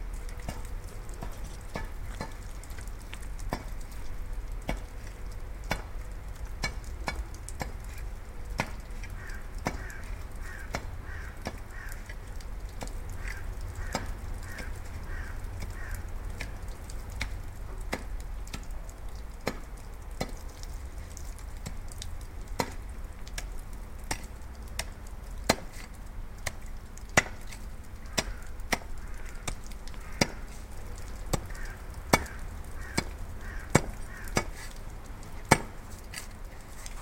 Moskau, kirgisiche Eishacker im Februar
Moscow, Russia